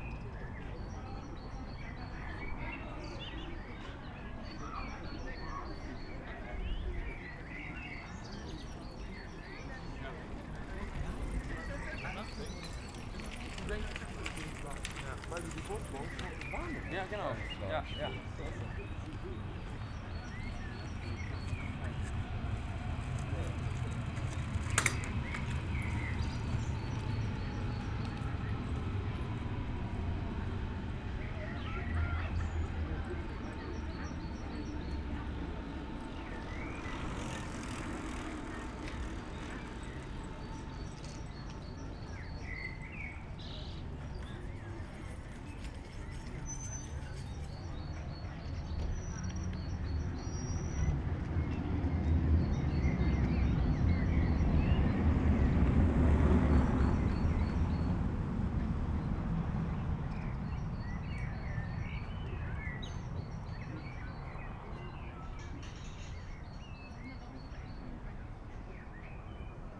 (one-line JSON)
{"title": "S-Bahn Priesterweg, Berlin - outside station ambience", "date": "2019-04-20 18:20:00", "description": "S-Bahn station Pristerweg looks like from some decades ago. On a warm spring early evening, some people gathering in a Biergarten pub, many cyclists passing by, some trains above.\n(Sony PCM D50, Primo EM172)", "latitude": "52.46", "longitude": "13.36", "altitude": "46", "timezone": "Europe/Berlin"}